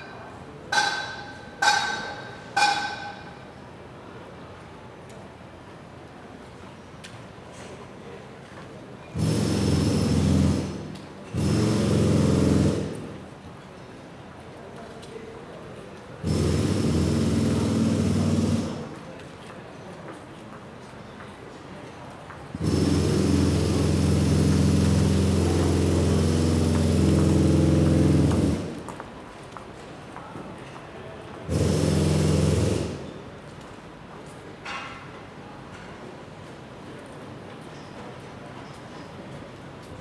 borers digging the entrance to a private parking in a public pedestrian zone - at the cost of citizens; erased trees and most of pavement